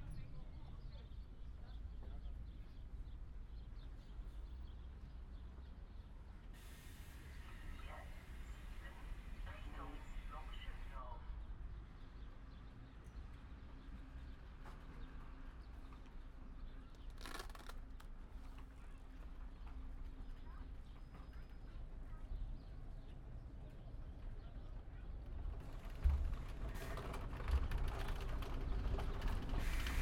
*Best listening experience on headphones.
Engaging sound events within a clear acoustic space of the forecourt of the main station of Weimar. Radiogenic voices, movements, birds and people. Major city arrivals and transits take place here. Stereo field is vivid and easily distinguishable.
Recording and monitoring gear: Zoom F4 Field Recorder, LOM MikroUsi Pro, Beyerdynamic DT 770 PRO/ DT 1990 PRO.